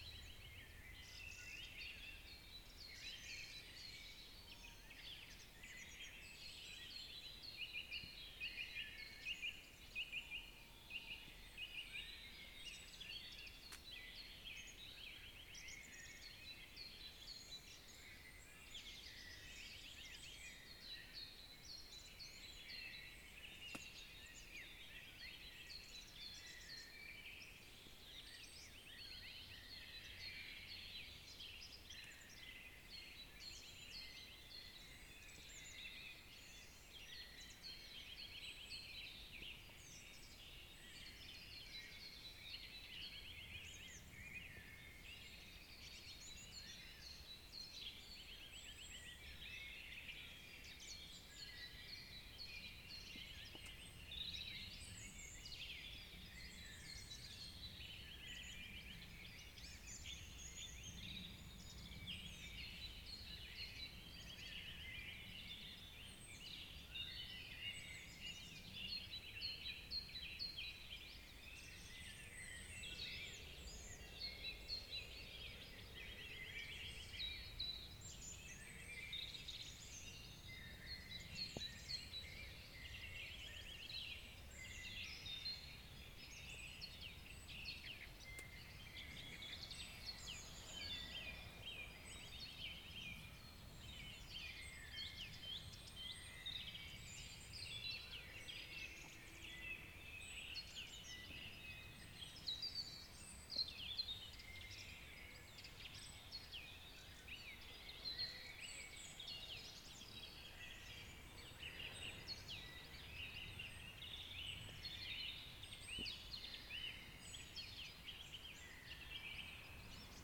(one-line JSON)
{"title": "Unnamed Road, Taunusstein, Deutschland - Märzcamp 2019", "date": "2019-03-24 05:42:00", "description": "Frühmorgendliche Vogelstimmen in der Nähes der Quelle der Walluf", "latitude": "50.10", "longitude": "8.12", "altitude": "450", "timezone": "Europe/Berlin"}